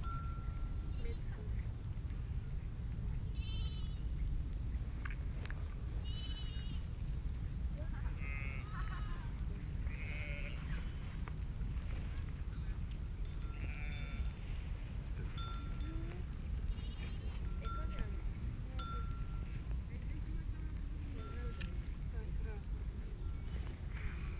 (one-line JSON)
{"title": "Carneval, masopust", "date": "2015-02-14 16:44:00", "description": "Masopust celebration with sheep flock", "latitude": "50.15", "longitude": "14.38", "altitude": "265", "timezone": "Europe/Prague"}